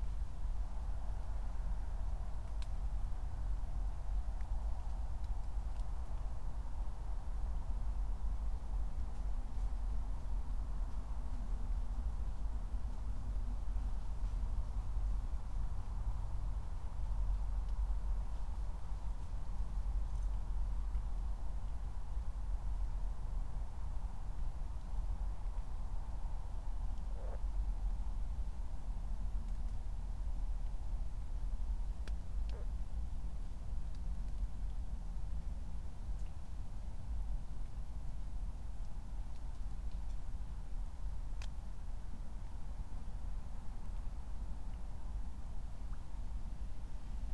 1:00 drone, raindrops, frogs, distant voices and music
Deutschland, 23 May 2020